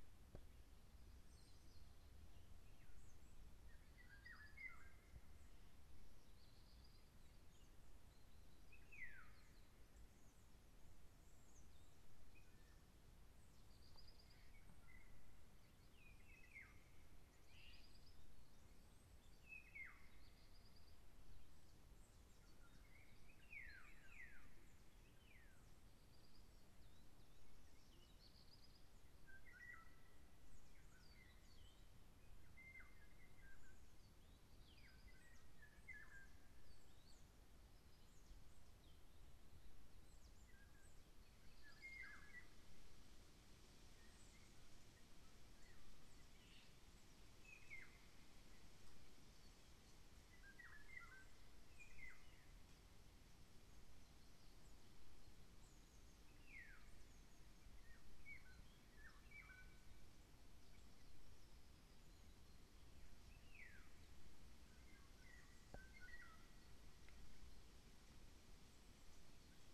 Gmina Lubiszyn, Polen - Palace
At a beautiful former hunting palace in the midst of the woods, a bird with a peculiar cry sang for us.
Poland, 27 July 2013, 17:00